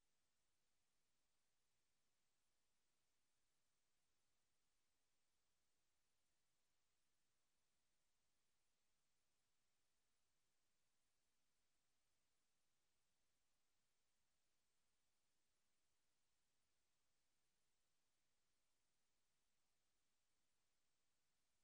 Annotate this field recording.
British Motorcycle Grand Prix 2003 ... 125 qualifying ... one point stereo to minidisk ... time approx ... commentary ...